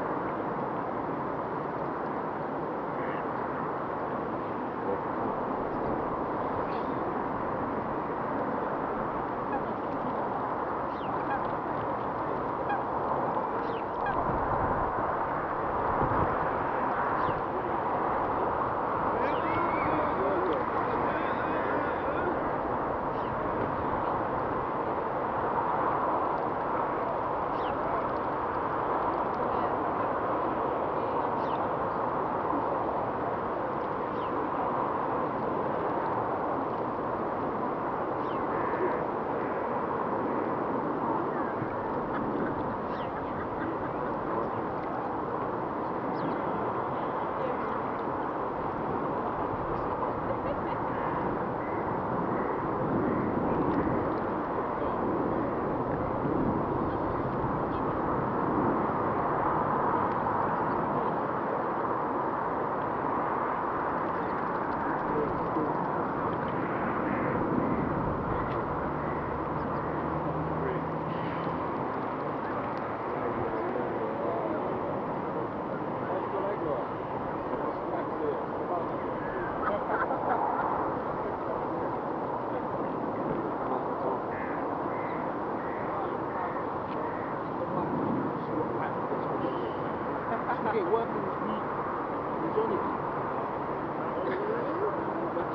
Epping Forest, Wanstead Flats, London, UK - Geese/Ducks at Wanstead Flats
Sun is setting, families etc enjoying the evening; geese (100s of them) and ducks swimming, some swans. Lots of flies/water insects in and around the waters edge. Getting some funny looks, a few questions asked..
Thanks